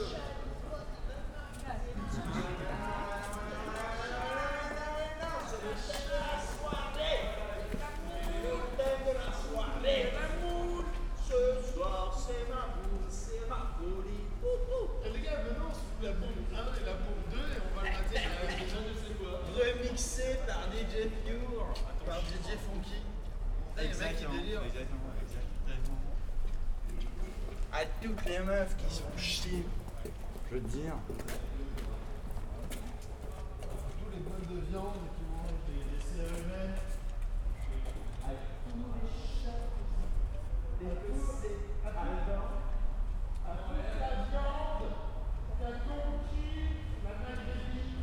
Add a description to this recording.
saturday night ambience sanderstr. neukölln berlin